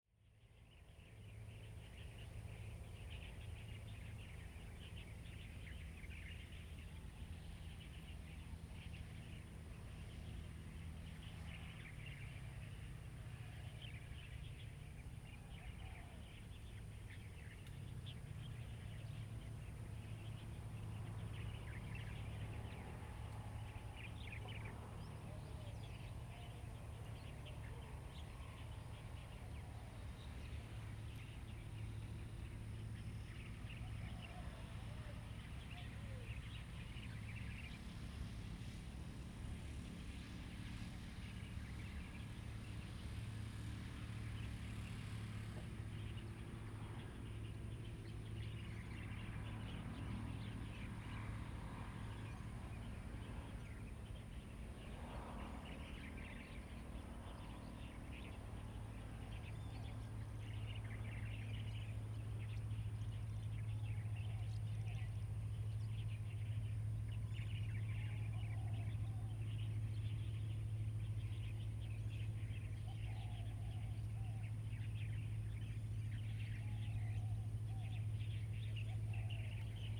Hsiao Liouciou Island, Pingtung County - Birds singing
at the Heliport, Birds singing, Chicken sounds, Traffic Sound
Zoom H2n MS +XY